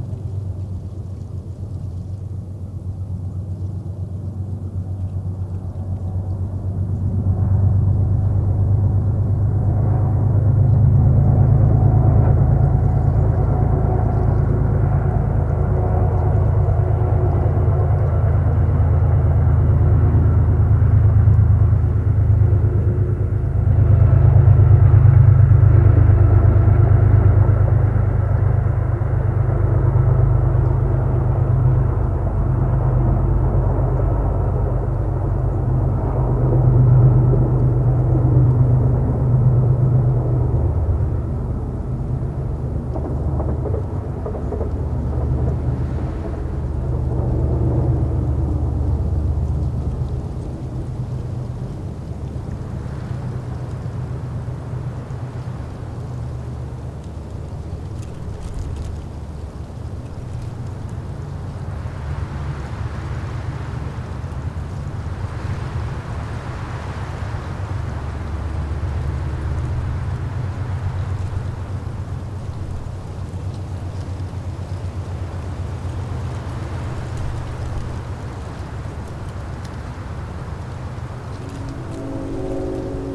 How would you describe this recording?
Soundscape recorded at the Constitution Marsh Audubon Center and Sanctuary trail located on the east side of the Hudson River. This tidal marsh is a vital natural habitat for many species of wildlife and is a significant coastal fish habitat and a New York State bird conservation area.